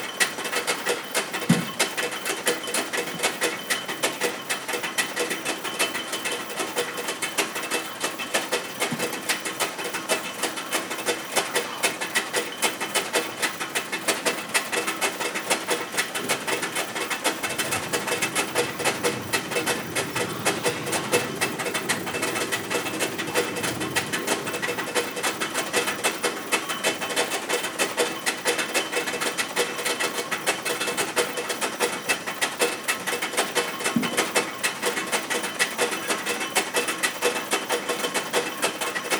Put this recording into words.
Ambiente junto a la verja de una granja. Además de los sonidos ocasionales de los animales, destacan los ritmos producidos por un motor.